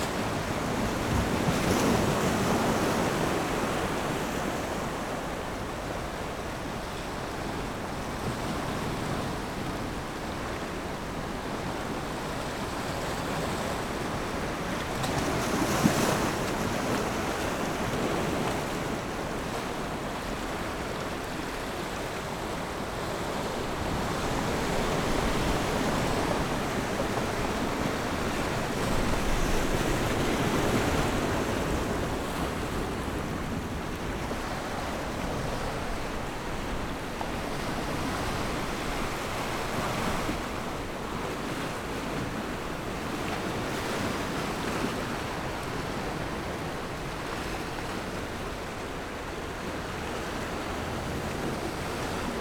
芹壁村, Beigan Township - sound of the waves
Sound of the waves Pat tide dock
Zoom H6 +Rode NT4